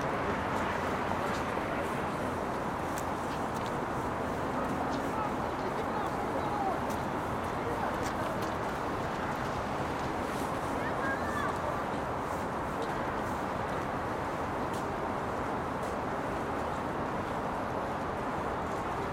Near Leninsky Prospekt metro station. You can hear cars driving on wet asphalt, people walking, it's snowing. Warm winter. Day.
4 February 2020, 15:41